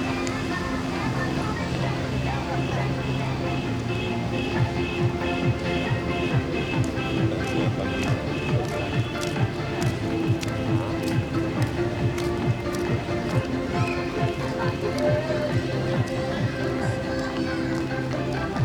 Many middle-aged women are dancing and dance aerobics
Sony Hi-MD MZ-RH1 +Sony ECM-MS907